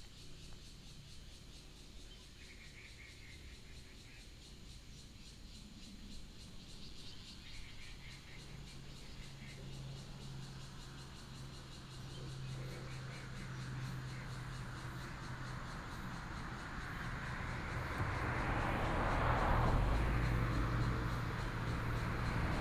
北岸道路, Touwu Township, Miaoli County - Next to the reservoir
Next to the reservoir, Traffic sound, The sound of birds, The sound of the plane, Binaural recordings, Sony PCM D100+ Soundman OKM II
15 September 2017, ~12:00